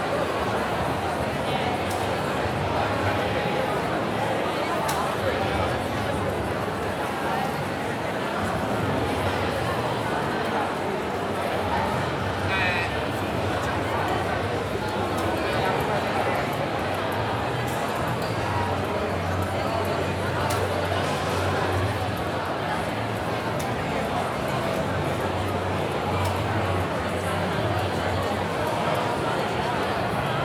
by night on the piazza delle vettovaglie. people having drinks, laughing and chatting till the last bar closes. water buckets being filled and emptied. glass shattering. air humming.
Pisa PI, Italy, 6 October 2018, 22:00